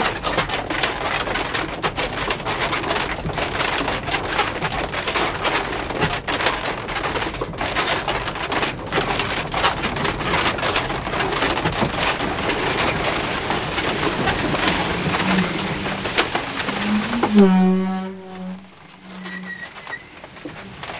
Rijksstraatweg, Schalsum, Nederland - Scharstumer molen @ work - inside
You can see the Scharstumer mill while driving on the A31 from Frjentsjer to Ljouwert (Franiker > Leeuwarden) on your right hand. When i asked, the kind miller allowed me to record the hughe wooden mechanism while he started up the mill.
Fryslân, Nederland, 4 February 2013